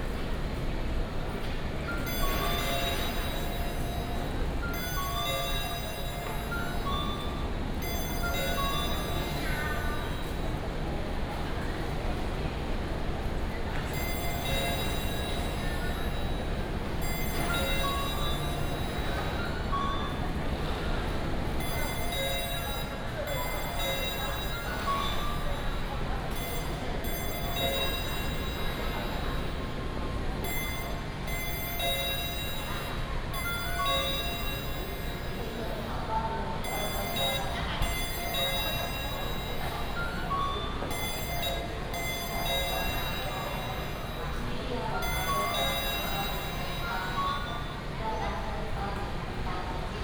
{"title": "Kaohsiung Station - At the train station hall", "date": "2018-03-30 08:52:00", "description": "At the train station hall, Ticket counter sound, Convenience store sound", "latitude": "22.64", "longitude": "120.30", "altitude": "12", "timezone": "Asia/Taipei"}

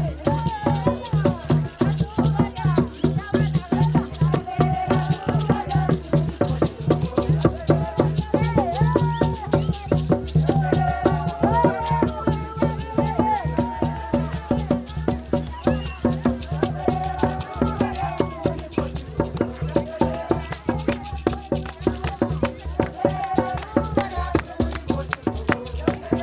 {"title": "Freedom Square, Binga, Zimbabwe - Women's Day Celebration", "date": "2016-04-29 12:56:00", "description": "recordings from the first public celebration of International Women’s Day at Binga’s urban centre convened by the Ministry of Women Affairs Zimbabwe", "latitude": "-17.62", "longitude": "27.34", "altitude": "627", "timezone": "Africa/Harare"}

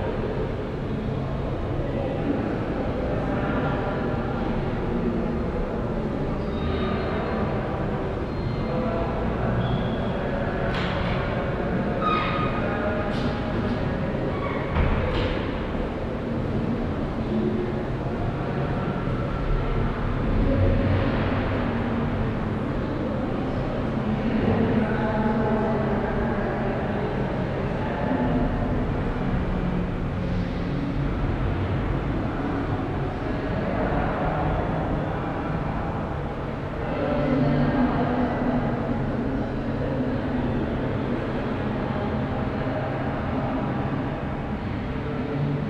{"title": "Altstadt, Düsseldorf, Deutschland - Düsseldorf, art academy, first floor", "date": "2012-11-06 16:00:00", "description": "Inside the classical building of the Düsseldorf art academy in the hallway of the first floor. The sound of steps, voices and transportation reverbing from the long and high stone walls.\nThis recording is part of the exhibition project - sonic states\nsoundmap nrw - topographic field recordings, social ambiences and art places", "latitude": "51.23", "longitude": "6.77", "altitude": "41", "timezone": "Europe/Berlin"}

